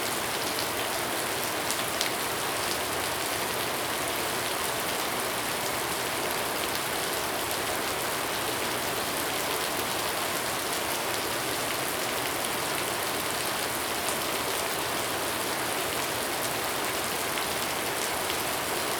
桃米里水上巷3-3號, 埔里鎮 - heavy rain
heavy rain
Zoom H2n MS+ XY